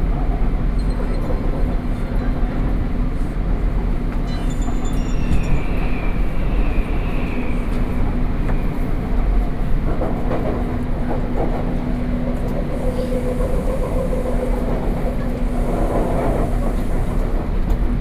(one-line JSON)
{"title": "Taipei, Taiwan - Take the MRT", "date": "2012-12-05 20:59:00", "latitude": "25.10", "longitude": "121.52", "altitude": "8", "timezone": "Asia/Taipei"}